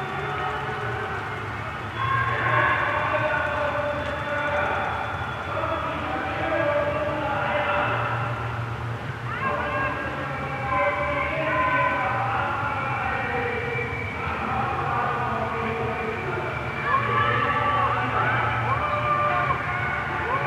Mitte, Berlin, Germany - nachbarn buhen baergida aus
von ca. 50 polizist/inn/en begleitet, werden ca. drei dutzend baergida demonstrant/inn/en von nachbar/inne/n ausgebuht. //neighbours booing at a few dozens of right-wing baergida-demonstrators.
26 September 2016, 21:13